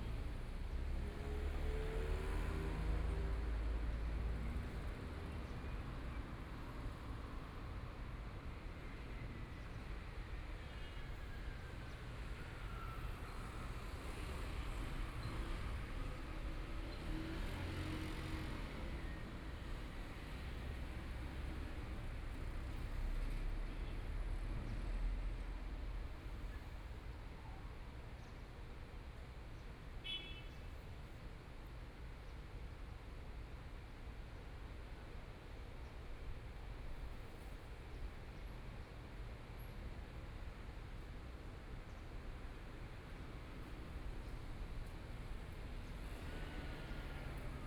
國防里, Hualien City - Environmental sounds
Aircraft flying through, Traffic Sound, Environmental sounds
Please turn up the volume
Binaural recordings, Zoom H4n+ Soundman OKM II